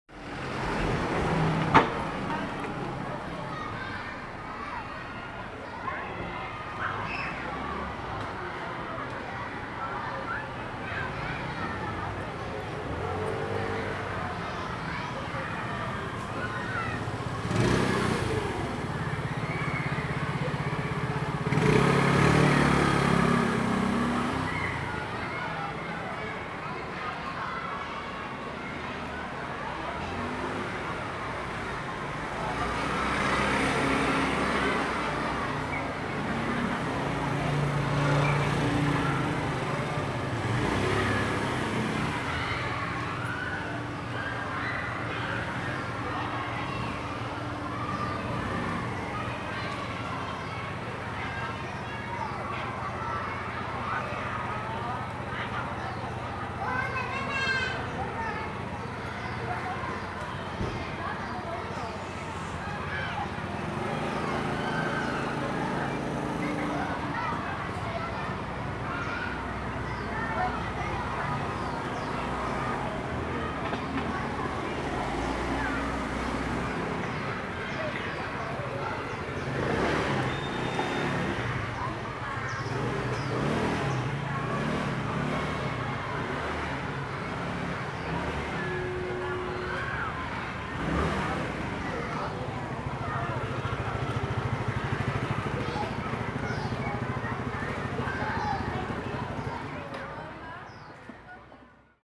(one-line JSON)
{"title": "Aly., Ln., Sec., Xingnan Rd., Zhonghe Dist. - Outside the school", "date": "2012-02-14 15:55:00", "description": "Outside the school, Traffic Sound, Many elementary school students, Sony ECM-MS907+Sony Hi-MD MZ-RH1", "latitude": "24.99", "longitude": "121.51", "altitude": "18", "timezone": "Asia/Taipei"}